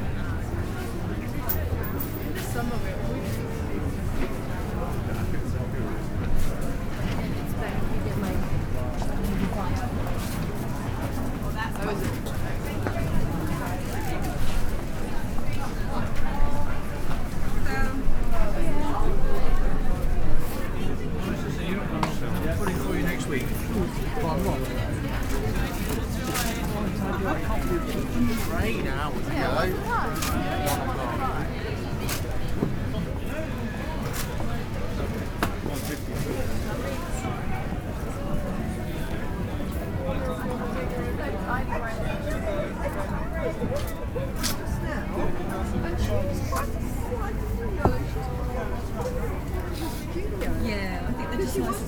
Broadway Market Atmosphere - Hackney Broadway Market, London, UK
A wander along the Broadway Market and back.
Greater London, England, United Kingdom, March 2020